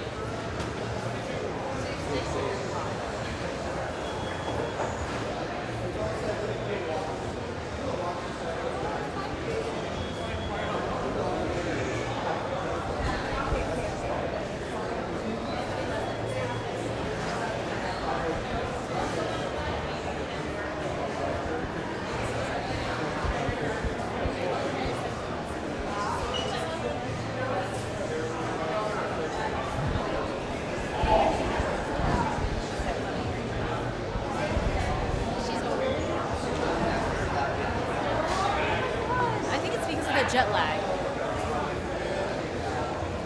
Ferry Building, San Francisco, CA - Inside the Ferry Building
A part of the San Francisco Ferry Building's soundscape. Captured by slowly walking from end to end of the building, focusing largely on voices, but encountering other unexpected sounds. Recorded with a Zoom Audio Recorder.